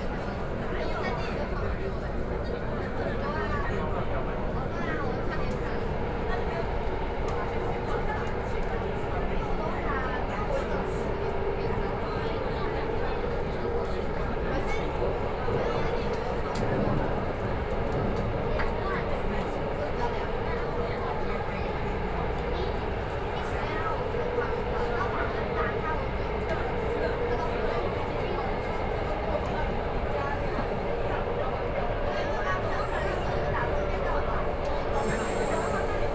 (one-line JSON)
{"title": "Da'an District, Taipei - soundwalk", "date": "2013-06-22 13:28:00", "description": "MRT stations, from Zhongxiao XinshengSony to Guting, PCM D50 + Soundman OKM II", "latitude": "25.04", "longitude": "121.53", "altitude": "13", "timezone": "Asia/Taipei"}